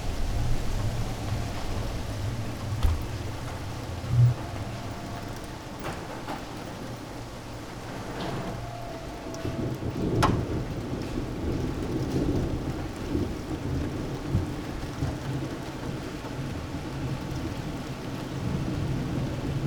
from/behind window, Mladinska, Maribor, Slovenia - rain in may, hesitates to fall